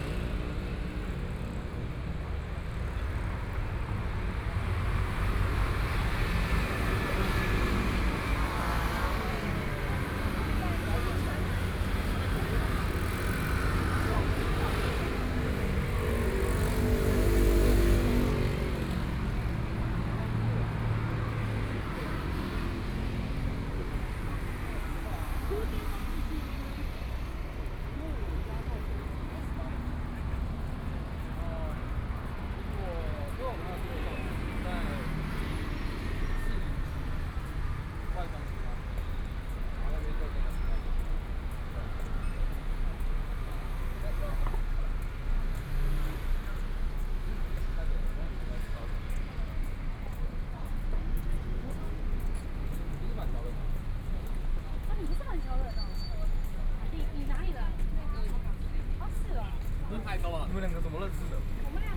Linsen S. Rd., Taipei City - walking on the Road
walking on the Road
Binaural recordings